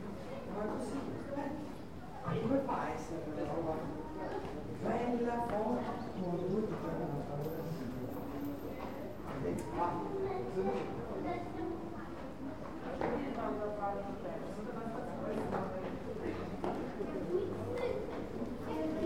{"title": "Rethymno, Crete, entrance to the fort", "date": "2019-05-04 17:10:00", "latitude": "35.37", "longitude": "24.47", "altitude": "22", "timezone": "Europe/Athens"}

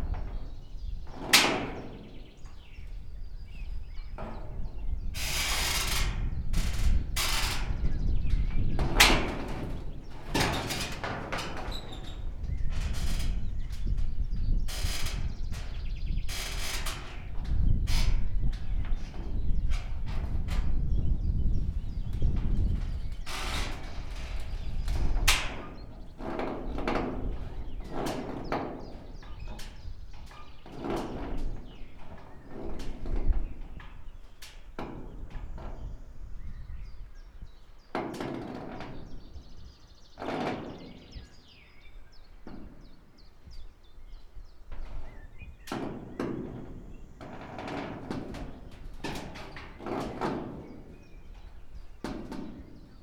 {"title": "Sasino, along Chelst stream - containers collapsed", "date": "2016-05-26 15:34:00", "description": "place revisited after a year. the ship containers have collapsed. warped pieces of their bodies bend and make creepy sounds in the wind. (sony d50)", "latitude": "54.78", "longitude": "17.74", "altitude": "2", "timezone": "Europe/Warsaw"}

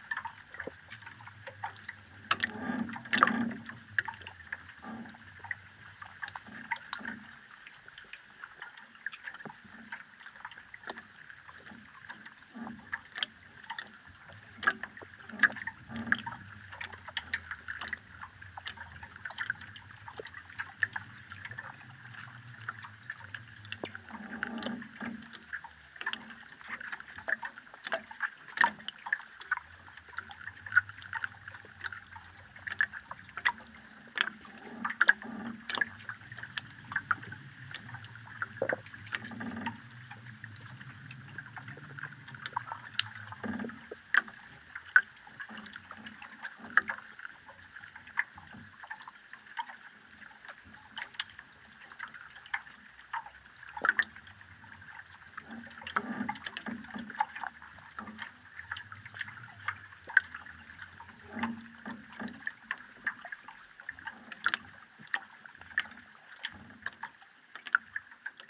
{"title": "Senheida, Latvia, Senheidas lake underwater", "date": "2020-07-30 21:20:00", "description": "Hidrophone in Sengheida lake...there is a boat swaying at the bridge...", "latitude": "55.76", "longitude": "26.74", "altitude": "151", "timezone": "Europe/Riga"}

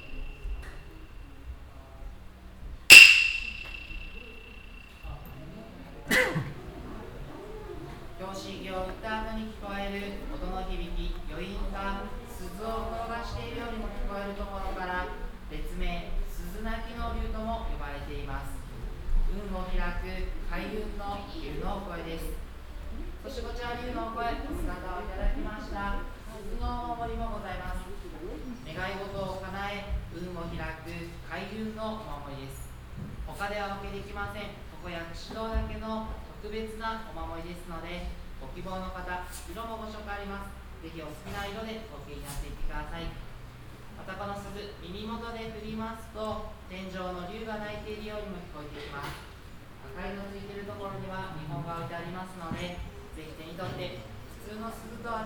{"title": "nikkō, tōshō-g shrine, dragon temple", "date": "2010-08-22 16:30:00", "description": "inside the dragon temple - a ceremony monk describing the function of the room and demonstrating the dragon echo effect\ninternational city scapes and topographic field recordings", "latitude": "36.76", "longitude": "139.60", "timezone": "Asia/Tokyo"}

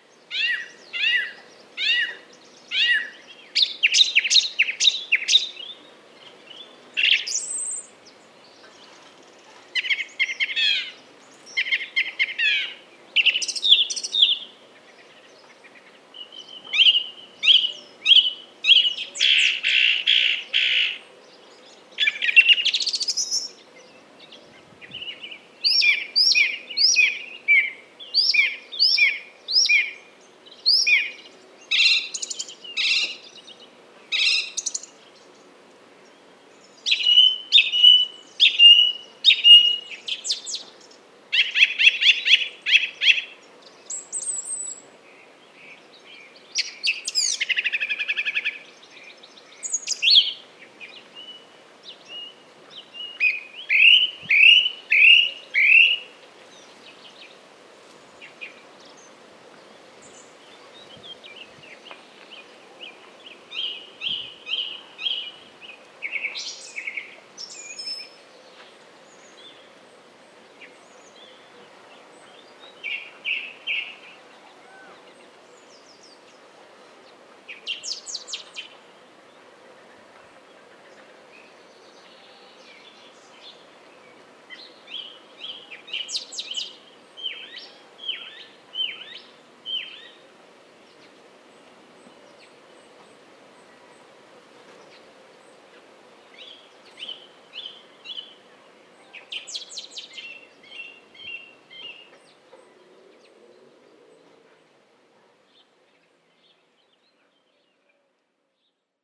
{"title": "Chemin des Ronferons, Merville-Franceville-Plage, France - Other birds", "date": "2020-05-16 16:17:00", "description": "Birds in my place, Zoom H6, Rode NTG4+", "latitude": "49.27", "longitude": "-0.18", "altitude": "4", "timezone": "Europe/Paris"}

